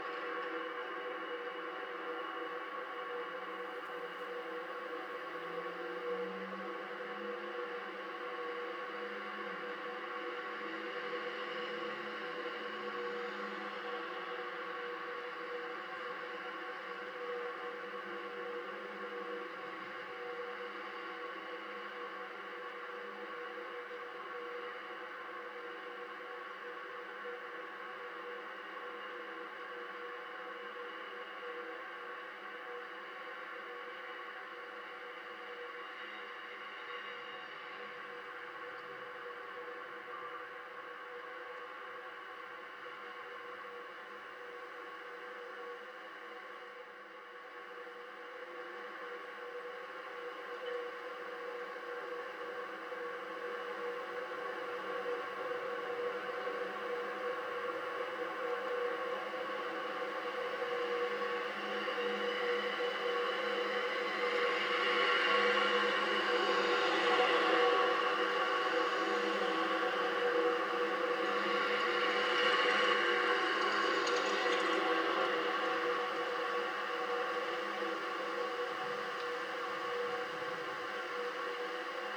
West Loop, Chicago, IL, USA - sign in union park
Two contact mics connected to sign in union park
8 November